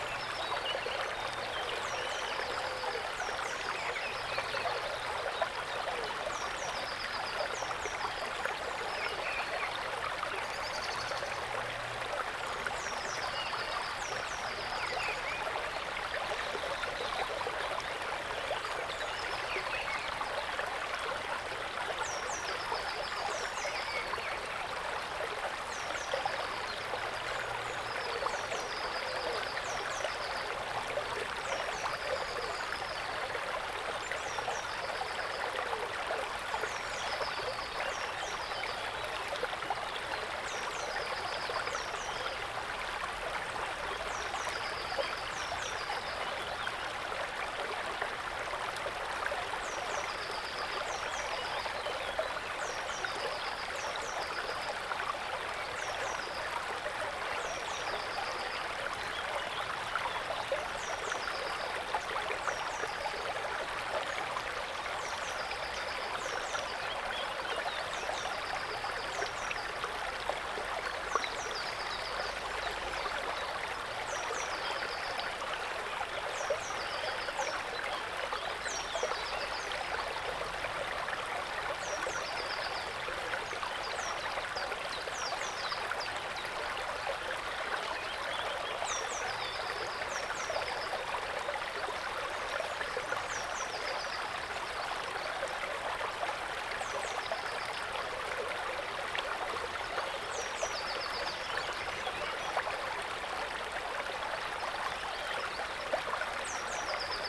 Voznický potok zurčing, birds singing on a sunny April day.
Recorded with Zoom H2n, 2CH, deadcat.
12 April 2019, ~06:00